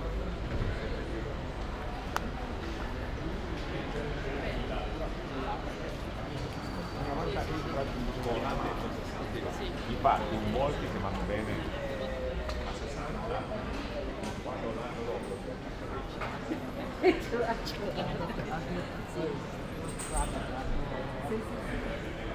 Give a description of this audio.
“Walk to outdoor market on Saturday one year later in the time of covid19” Soundwalk, Chapter CLXXII of Ascolto il tuo cuore, città. I listen to your heart, city. Saturday, May 15th, 2021. Walk in the open-door square market at Piazza Madama Cristina, district of San Salvario, Turin, one year and two months days after emergency disposition due to the epidemic of COVID19. Start at 11:57 a.m., end at h. 00:15 p.m. duration of recording 18’16”, As binaural recording is suggested headphones listening. The entire path is associated with a synchronized GPS track recorded in the (kml, gpx, kmz) files downloadable here: go to similar soundwalk, one year before: 78-Walk to outdoor market on Saturday